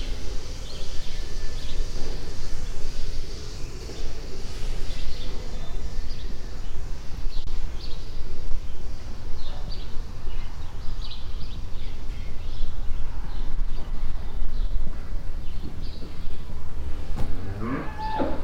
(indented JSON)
{
  "title": "dorscheid, barn yard, cow shed",
  "date": "2011-09-17 17:09:00",
  "description": "At a big cow shed. The sound of spraying water, radio music, birds and the cows mooing and moving inside the shed. In the background the sound of a small airplane crossing the sky.\nDorscheid, Bauernhof, Kuhstall\nBei einem großen Kuhstall. Das Geräusch von spritzendem Wasser, Radiomusik, Vögel und die muhenden Kühe, die sich im Stall bewegen. Im Hintergrund das Geräusch von einem kleinen Flugzeug am Himmel.\nDorscheid, ferme, étable à vaches\nUne grande étable à vaches. Le bruit de l’eau qui gicle, de la musique à la radio, des oiseaux et les vaches qui meuglent en se déplaçant dans l’étable. Dans le fond, le bruit d’un petit avion traversant le ciel.",
  "latitude": "50.04",
  "longitude": "6.07",
  "altitude": "480",
  "timezone": "Europe/Luxembourg"
}